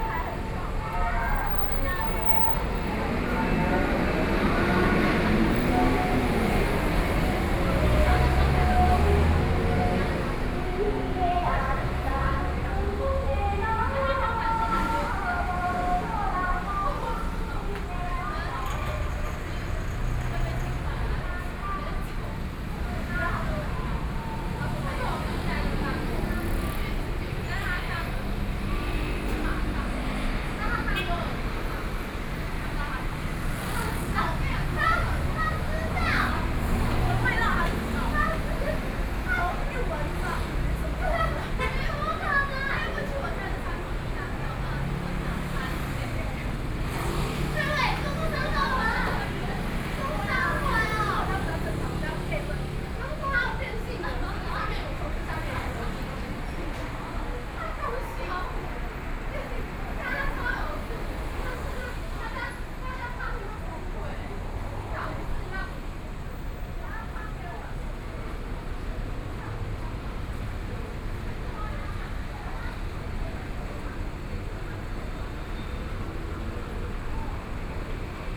Linsen S. Rd., Taipei City - Standing on the roadside
Place at the restaurant entrance, Publicity, Traffic Noise, A group of female students talking voice, Binaural recordings, Sony PCM D50 + Soundman OKM II